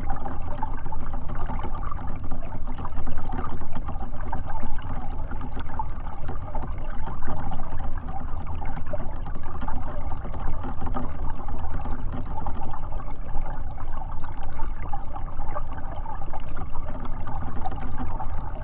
Utena, Lithuania, frozen twig in river
frozen twig tilted to river. contact microphones on the twig